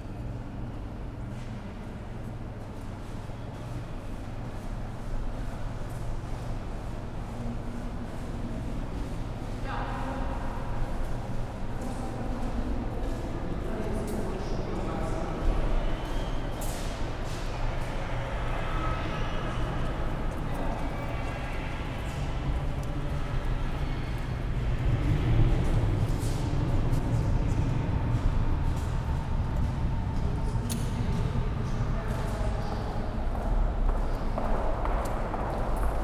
Berlin, Wannsee, S-bahn - station hall ambience
Berlin, S-Bahn station Wannsee, Saturday afternoon, station hall echos and ambience
(Sony PCM D50, DPA4060)
Berlin, Germany, 6 December 2014, 13:30